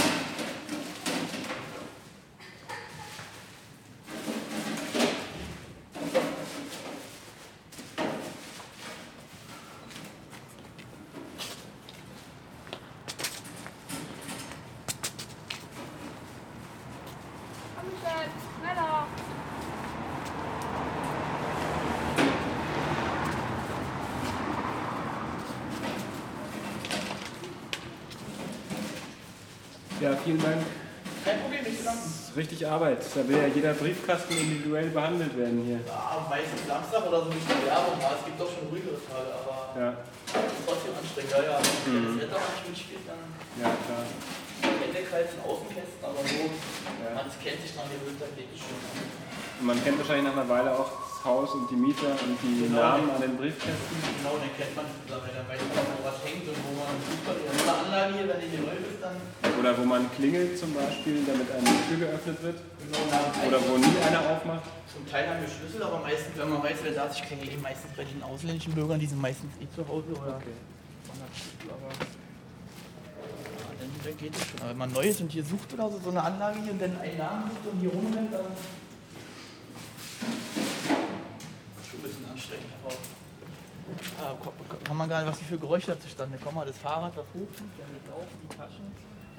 Zechliner Straße, Soldiner Kiez, Wedding, Berlin, Deutschland - Zechliner Straße, Berlin - Following the postman
Mit dem Briefträger unterwegs.